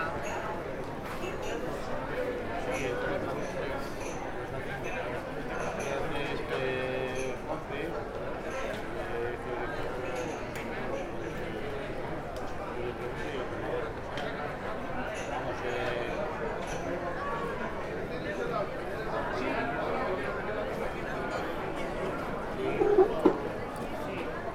Aeropuerto de Valencia (VLC), Manises, Valencia, Valencia, España - Enrique Salom Aeropuerto Manises - Llegadas.
Airport Bar. Roland R-26
Manises, Valencia, Spain, 10 April 2015